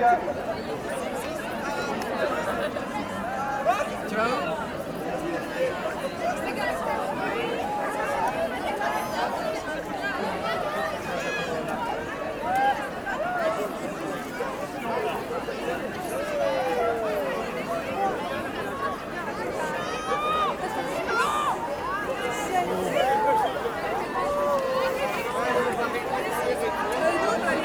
Centre, Ottignies-Louvain-la-Neuve, Belgique - A film called tomorrow
The 750 students of St-Jean Baptist college in Wavre went to see a film called "tomorrow", for a sustainable development. On the main place of this city, they make an "holaa" dedicate to the planet.
18 March 2016, 12:10